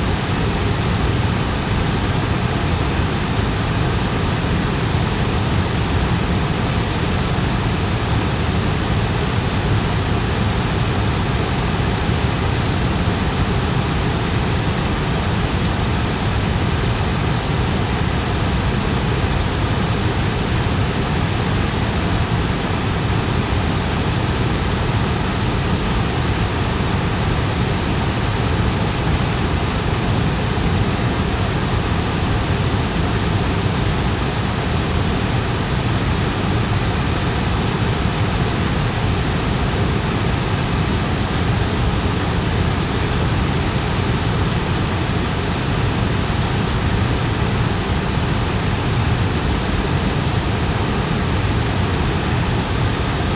Inselstraße, Hameln, Germany - City Waterfall in Hameln
A Waterfall in the Center of Hameln City.
Landkreis Hameln-Pyrmont, Niedersachsen, Deutschland, 28 April 2021, ~15:00